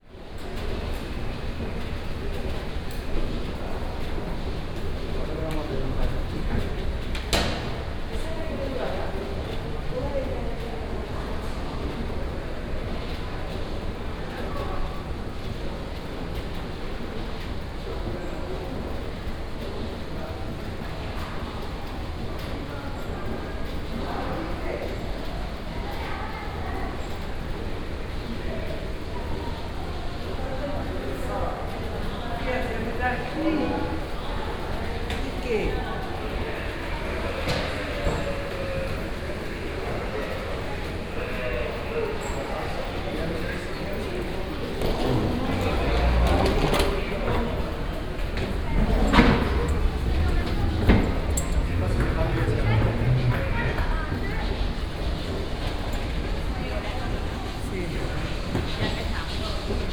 Airport Marrakesch-Menara - security zone, elevator
security zone, after the checks, elevator